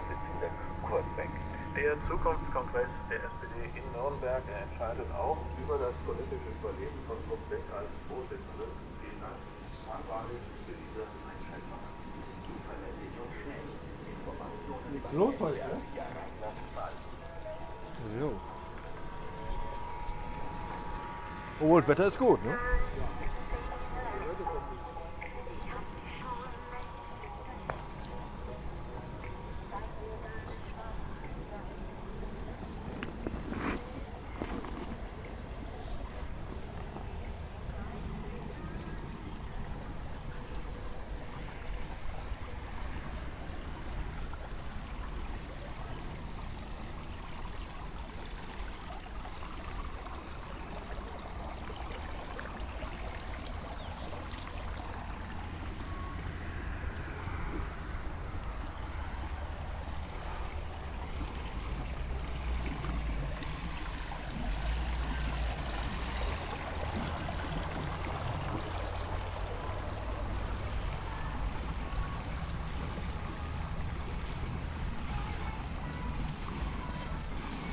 radio playing outside, cheap loudspeakers, may 31, 2008 - Project: "hasenbrot - a private sound diary"